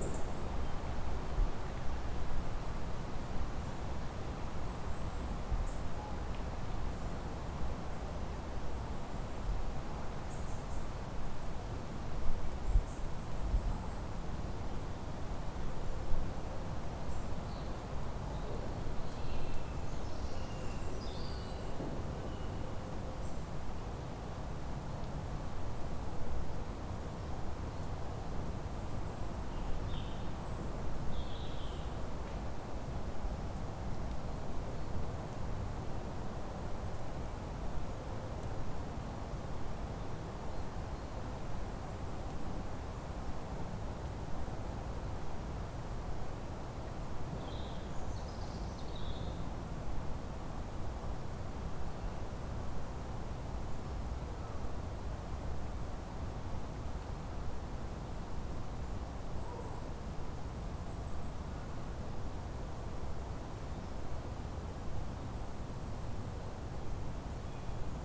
Recorded inside Lickey Hills Country Park with a Zoom H4n.